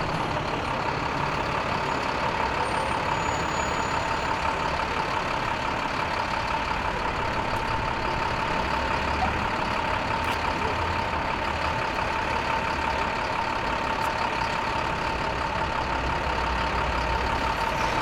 Traffic rolling over large construction steel plates next to the New York Public Library.
United States